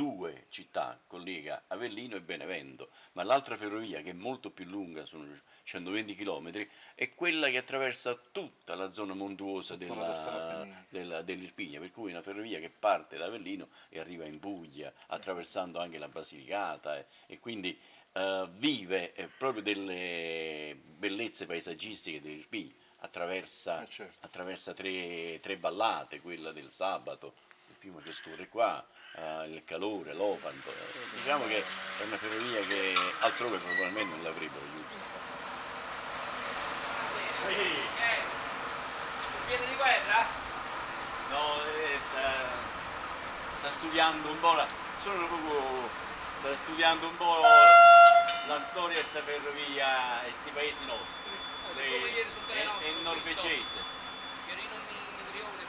Tufo, Avellino, Italy - past tracs
The recording was made on the train between Benevento and Avelino, from its stations and surrounding landscapes. The rail line was shut down in October 2012.
21 July 2012, 13:55